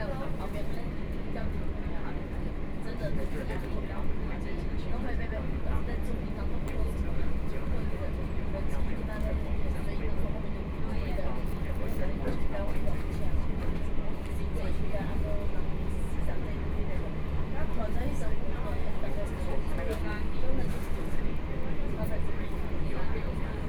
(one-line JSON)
{"title": "鎮安村, Linbian Township - Chu-Kuang Express", "date": "2014-09-04 10:33:00", "description": "Chu-Kuang Express, fromZhen'an Station to Linbian Station", "latitude": "22.45", "longitude": "120.51", "altitude": "1", "timezone": "Asia/Taipei"}